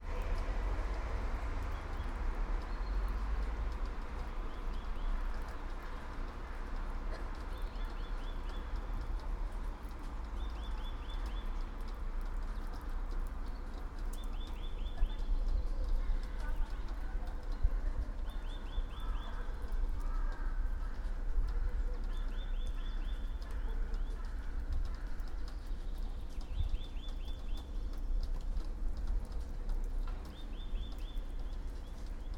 all the mornings of the ... - feb 16 2013 sat
16 February 2013, 09:24